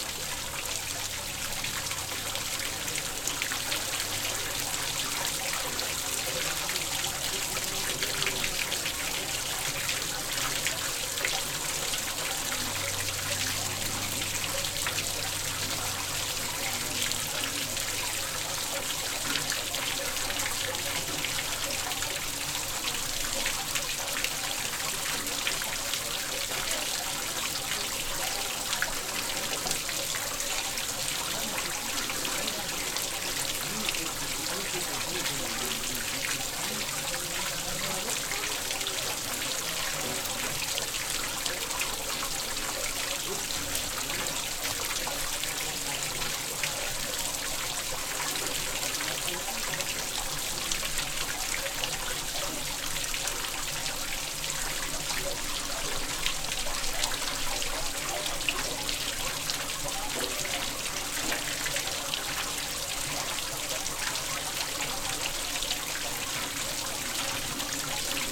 Rue de Pourrenque, Fenouillet, France - passage of ecluse
passage of ecluse, water drop
Captation ZOOMH6